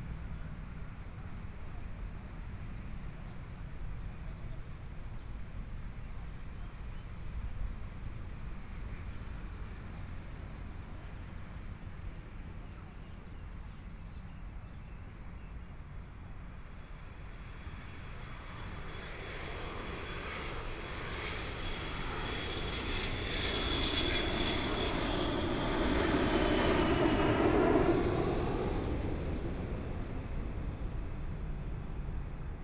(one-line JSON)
{"title": "Xinsheng Park - Taipei EXPO Park - walking in the Park", "date": "2014-02-15 14:38:00", "description": "walking in the Park, Birds singing, Aircraft flying through, Traffic Sound, Binaural recordings, Zoom H4n+ Soundman OKM II", "latitude": "25.07", "longitude": "121.53", "timezone": "Asia/Taipei"}